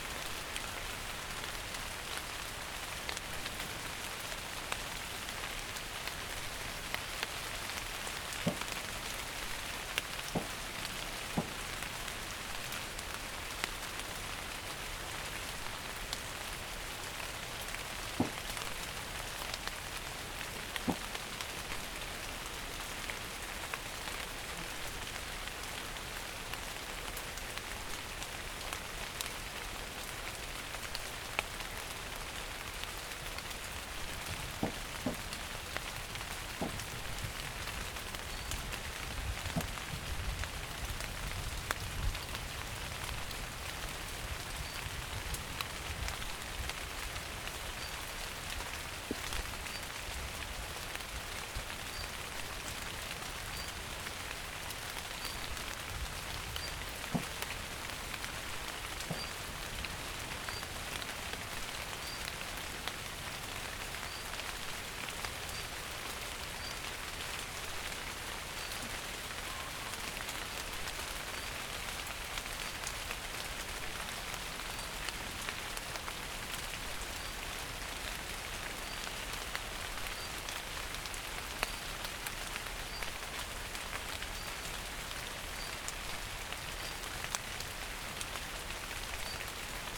Rain on leaves early morning. Recorded with Zoom H6. Øivind Weingaarde.